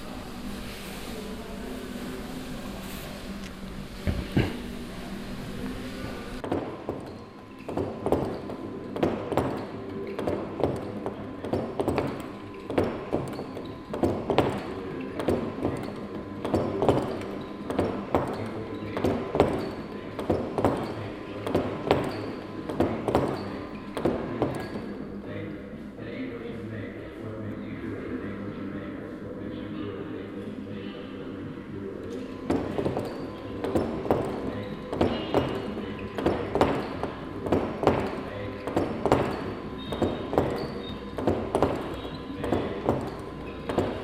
otterlo, houtkampweg, kröller-müller museum
in the museum, peopple moving, dutchg voices, a machine sculpture
international soundmap : social ambiences/ listen to the people in & outdoor topographic field recordings